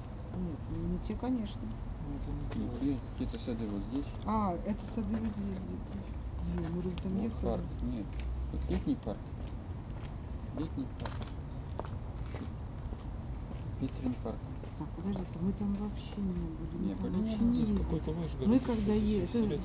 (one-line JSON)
{"title": "Praha, jewish cemetery", "date": "2011-06-23 13:35:00", "description": "at the grave of Franz Kafka, russian scientists discuss about where they are and where to go.", "latitude": "50.08", "longitude": "14.48", "timezone": "Europe/Prague"}